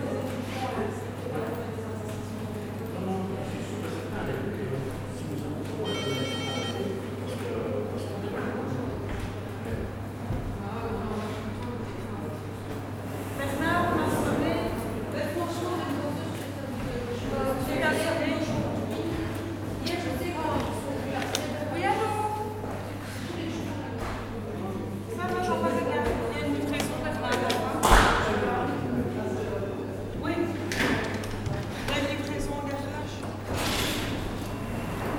Namur, Belgique - CPAS reception
The main reception of the CPAS. This is an office helping people who have financial difficulties.